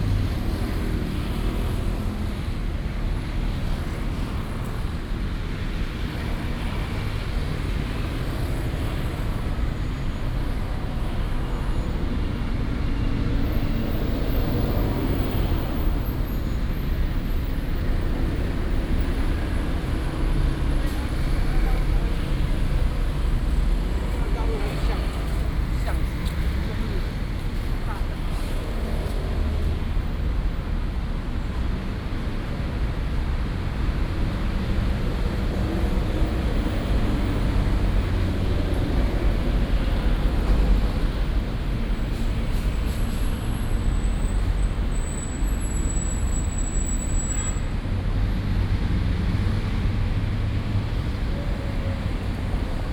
平安公園, 大安區, Taipei City - Footsteps and Traffic Sound
End of working hours, Footsteps and Traffic Sound